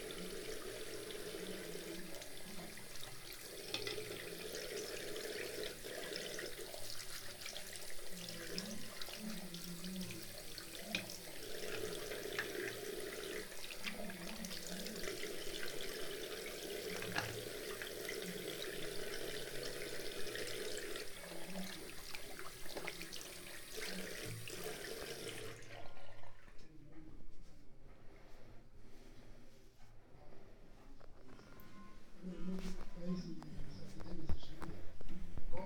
Ascolto il tuo cuore, città. I listen to your heart, city. Chapter CXIII - December afternoon at Valentino park in the time of COVID19: soundwalk
"December afternoon at Valentino park in the time of COVID19": soundwalk
Chapter CXLVIII of Ascolto il tuo cuore, città. I listen to your heart, city
Thursday, December 17th 2020. San Salvario district Turin, to Valentino, walking in the Valentino Park, Turin, about six weeks of new restrictive disposition due to the epidemic of COVID19.
Start at 1:45 p.m. end at 2:36 p.m. duration of recording 50’48”
The entire path is associated with a synchronized GPS track recorded in the (kmz, kml, gpx) files downloadable here:
Piemonte, Italia, 17 December 2020